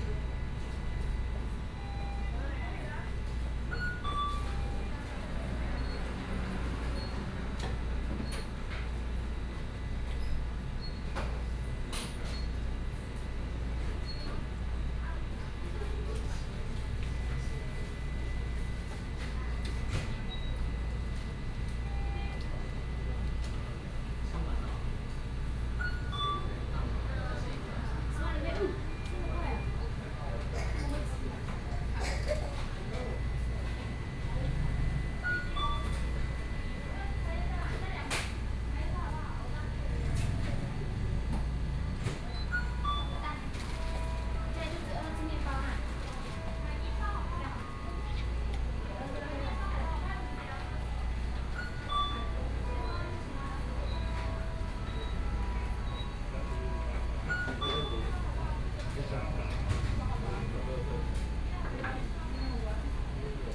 Central Cross-Island Highway, 花蓮縣富世村 - In convenience stores
In convenience stores, The weather is very hot
Binaural recordings